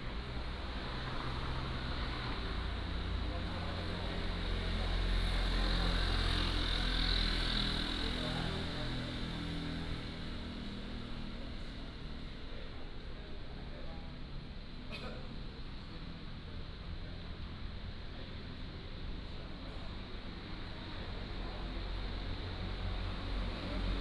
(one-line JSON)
{"title": "Kinmen Airport, Taiwan - At the airport", "date": "2014-11-04 18:21:00", "description": "At the airport, Aircraft flying through, Traffic Sound", "latitude": "24.44", "longitude": "118.37", "altitude": "12", "timezone": "Asia/Taipei"}